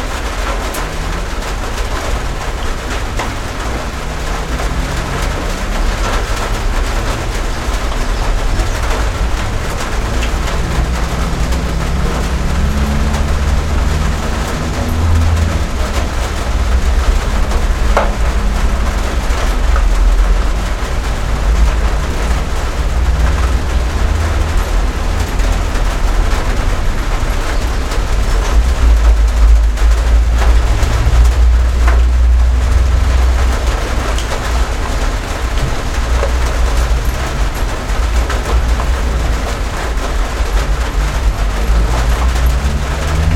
Southern Paarl, Paarl, South Africa - Rain on a tin roof
Stereo microphone under an tin roof over the entrance to the dwelling.
Connected directly to a Sony ICD-UX512F recorder. Un-edited.